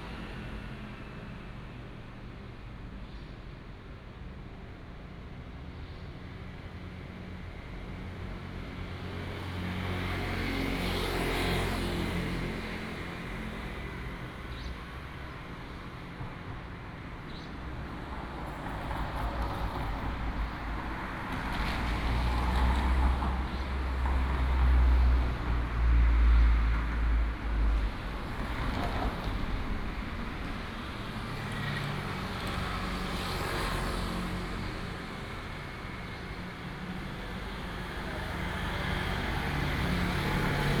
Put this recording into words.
Night street, Bird sound, Traffic sound